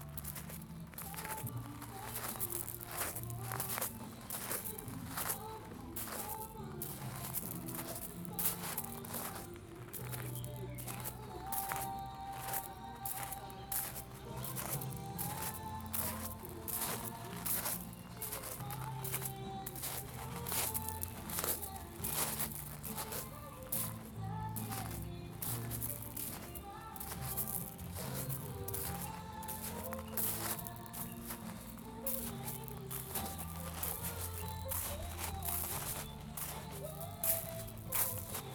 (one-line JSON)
{"title": "Tainan south gate 台南大南門 - Walking on the graveled path", "date": "2014-06-28 16:30:00", "description": "Walking on the graveled path in the historical monument. 行經大南門古跡中的石子路", "latitude": "22.99", "longitude": "120.20", "altitude": "17", "timezone": "Asia/Taipei"}